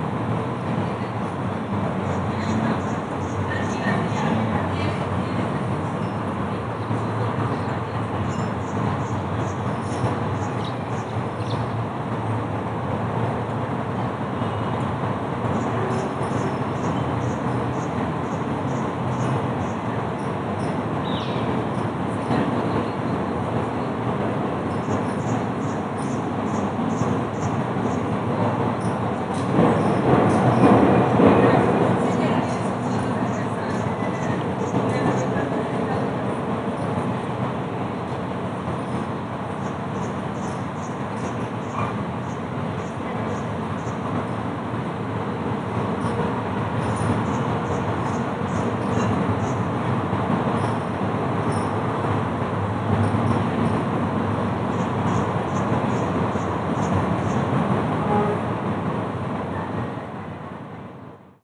Wetland in Bogota, this place three fundamental sounds like the wind, tree leaves and traffic. We can hear also some sound signs like hanging bells, bus brake, cars hitting the floor (metalik sound when the car jumps) a truck horn. Also for some sound marks, we can hear a few voices and birds
Región Andina, Colombia, 16 May